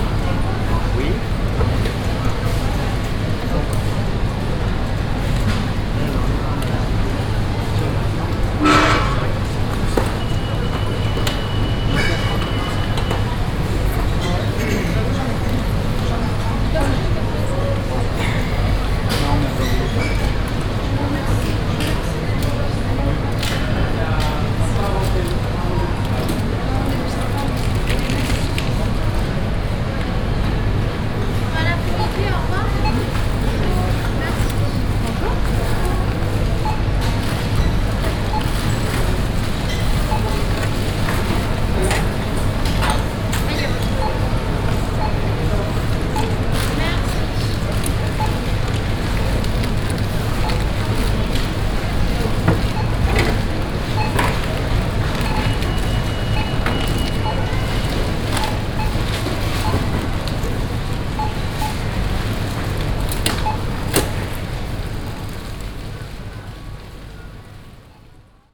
orange, supermarket, checkout counter
Inside a huge supermarket at the checkout counter. The sound of beeping digital scanners, shopping wagons and a clerk talking on the phone.
international village scapes - topographic field recordings and social ambiences
Orange, France, 28 August 2011